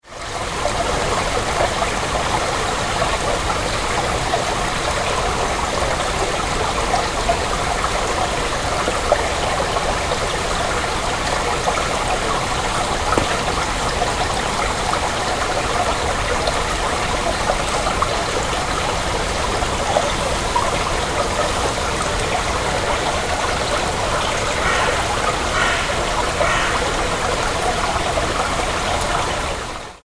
Bergisch Gladbach, Frankenforst, Saaler Mühle, Bach, Plätschern